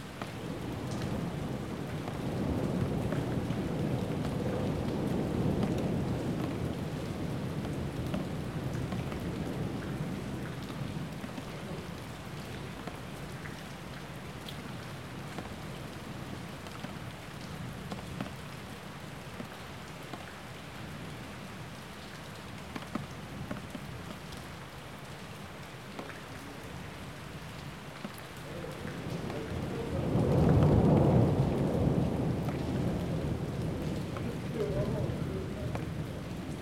Jourdain, Paris, France - Rain and thunderstorm [Jourdain]
Paris.
Orage entendu depuis le 3eme étage d'un immeuble.Pluie qui tombe dans une petite rue.
Rain and thunderstorm heared from the 3rd floor window .
20 June 2011